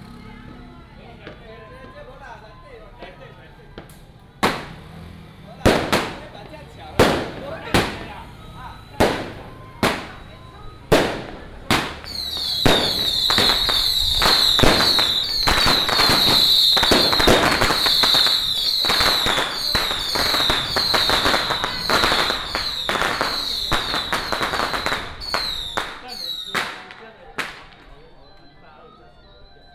新吉里新翰宮, Huwei Township - In the temple
Firecrackers and fireworks, Many people gathered In the temple, Matsu Pilgrimage Procession
Yunlin County, Huwei Township